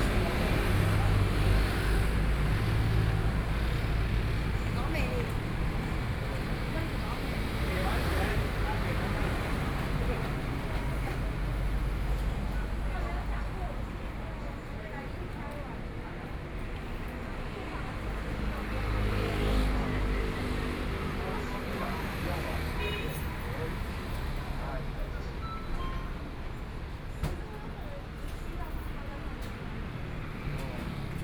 Wufu 4th Rd., Kaohsiung City - walking on the Road
walking on the Road, Various shops sound, Traffic Sound, Convenience Store
Binaural recordings